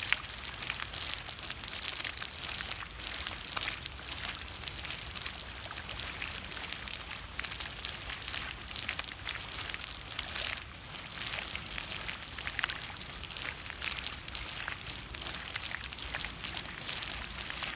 Vyskov, Czech Republic - Black water spraying from a leak in the rusty pipes
Rusty pipes carry dirty water to the settling pools pass here now covered with course grass. The pipe has sprung a leak.
Výškov, Czech Republic, October 2016